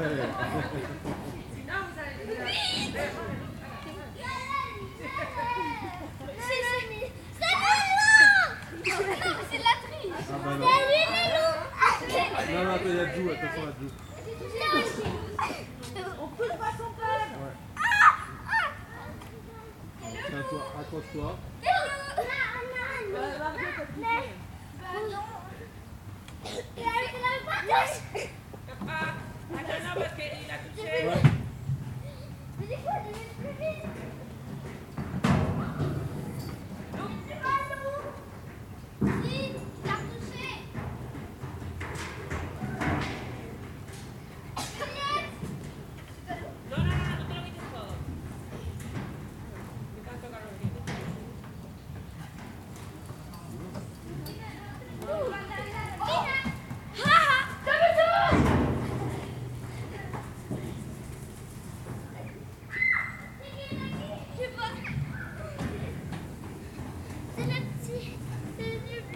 Jardin de l'observatoire, Av. Camille Flammarion, Toulouse, France - child playing in the park
child, playing, park, run, creaming, parent, tal
Captation ; Zoom h4n